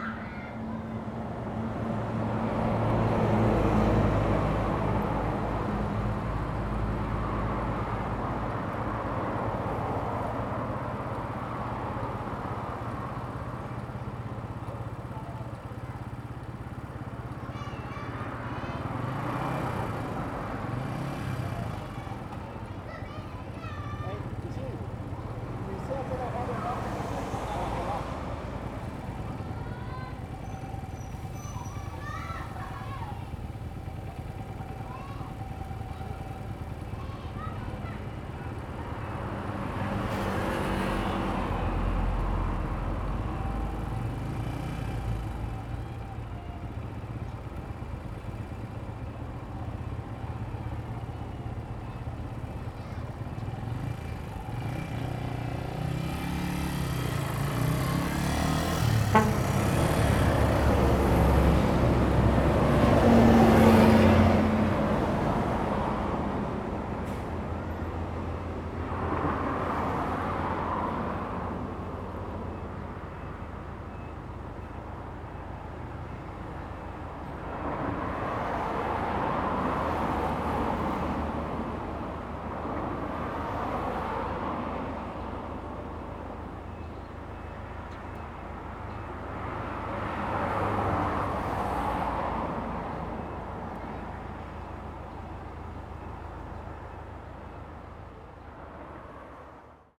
博愛里, Chenggong Township - the bus stop
The weather is very hot, Next to the bus stop, Traffic Sound, Small village
Zoom H2n MS +XY